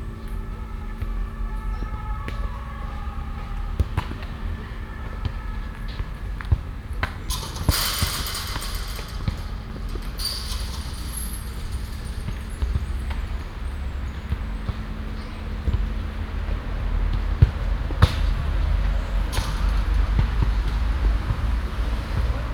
a reminder of the vibrant city
Deutschland, 8 May 2004, 17:00